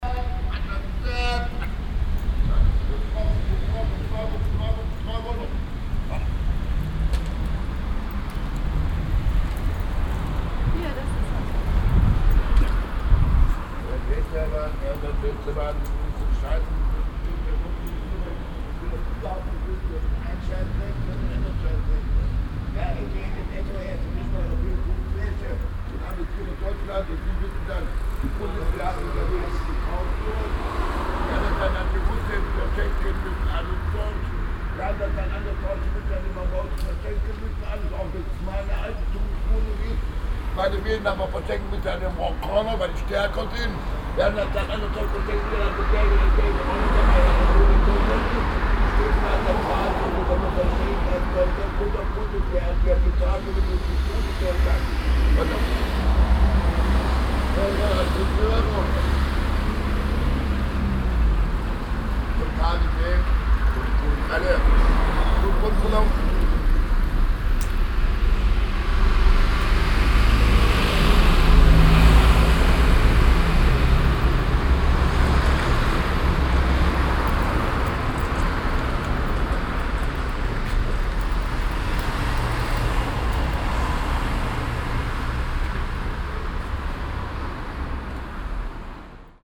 cologne, venloerstrasse, obdachloser an strasse
soundmap: köln/ nrw
öffentliche selbstgespräche eines obdachlosen auf dem trottoir der venloerstrasse, verkehr und windgeräusche, nachmittags
project: social ambiences/ listen to the people - in & outdoor nearfield recordings